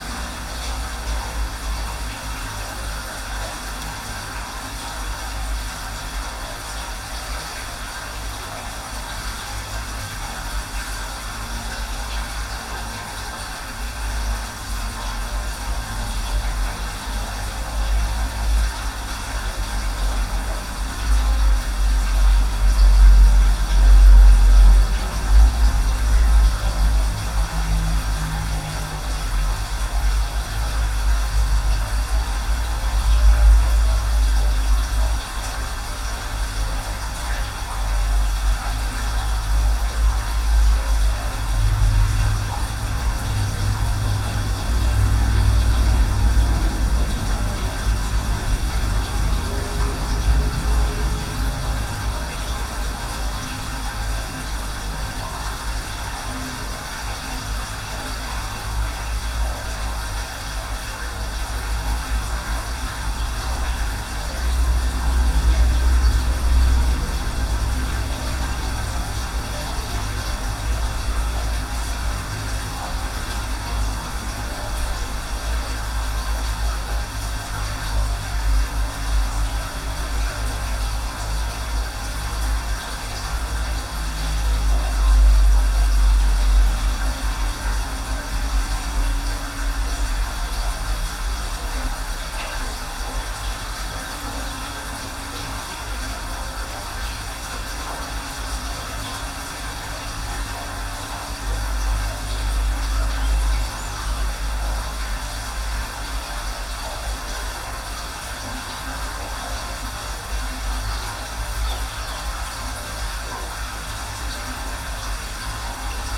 R. Igreja, Seixezelo, Portugal - Source of river Febros
Recording made at the source of the river Febros, in the place we have water falling into a stone tank, and in the case of this record, the recorder was placed inside a ventilation pipe existing on the site.
Porto, Portugal, February 2022